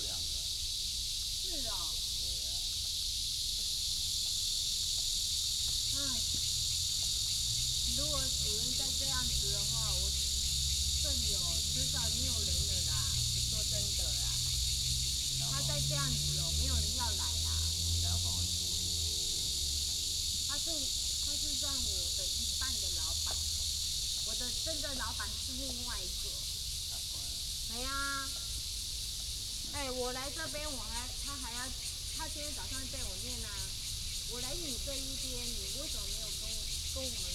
桃園光影, Zhongli Dist., Taoyuan City - Off work
In the parking lot, Off work, Traffic sound, Cicadas, birds sound